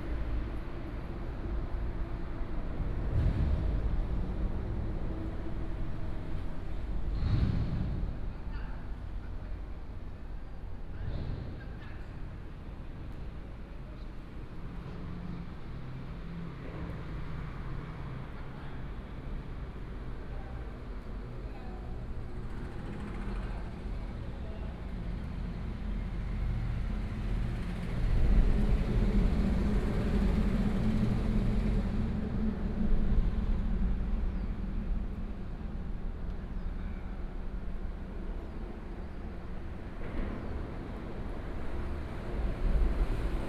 Xiangshan Dist., Hsinchu City - next to the railway
next to the railway, traffic sound, Under the elevated road, The train passes by, Binaural recordings, Sony PCM D100+ Soundman OKM II